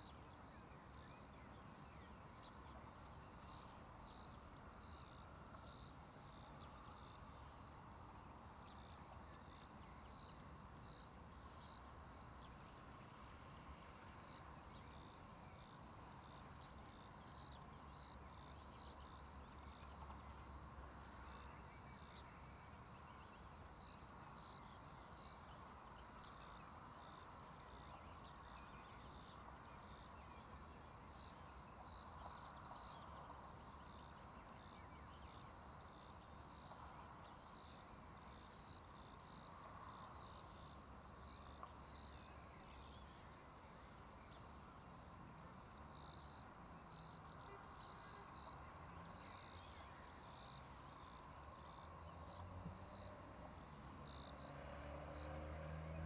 Rijeka, Croatia, Grasshoppers Game - Grasshoppers Birds - 3
Primorsko-Goranska županija, Hrvatska, 19 May 2013